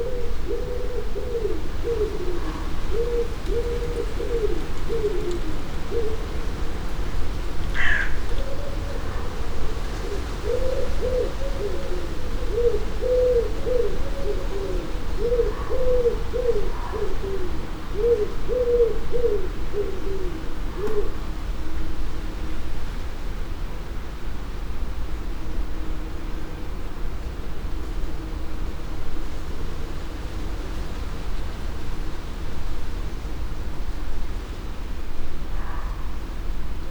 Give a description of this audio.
It's 5am. Bees are in the nasturtiums just to the left of the open door, a muntjac calls on the hillside: he is a third of a mile away and 500ft higher, crows and pigeons make up the dawn chorus for this time of the year. MixPre 6 II with 2 x Sennheiser MKH 8020s.